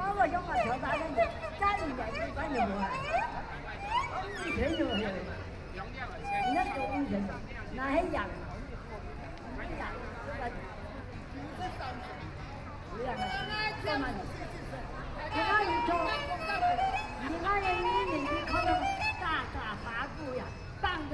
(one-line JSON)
{"title": "Taipei City Hakka Cultural Park - storyteller", "date": "2013-10-20 15:25:00", "description": "A very old age, old people use Hakka, Facing the crowd talking story, Binaural recordings, Sony PCM D50 + Soundman OKM II", "latitude": "25.02", "longitude": "121.53", "altitude": "12", "timezone": "Asia/Taipei"}